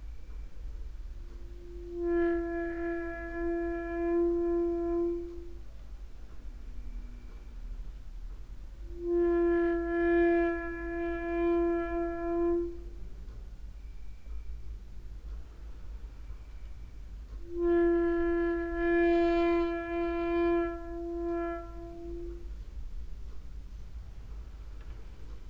Mladinska, Maribor, Slovenia - late night creaky lullaby for cricket/25
quiet doors, and cricket, getting more distant and silent with nearby autumn